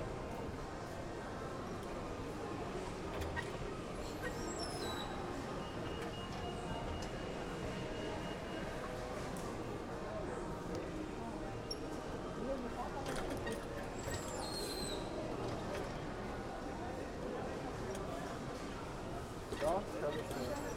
Nova Gorica, Slovenija, Kulandija - Vratca u Q